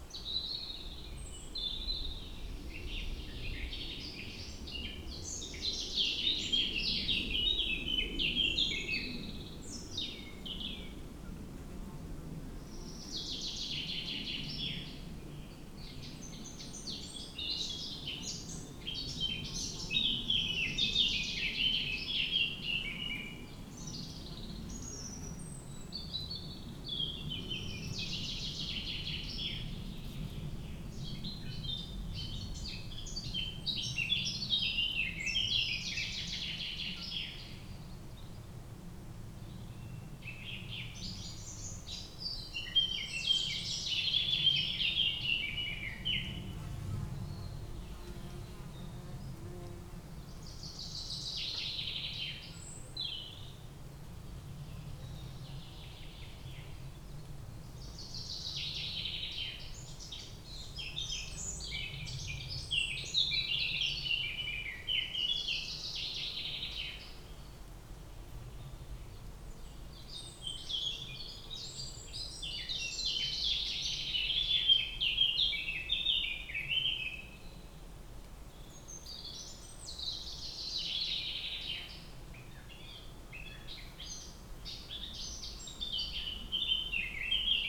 Planina Razor, Tolmin, Slovenia - Birds in forest
Birds in forest.
lom Uši Pro, MixPreII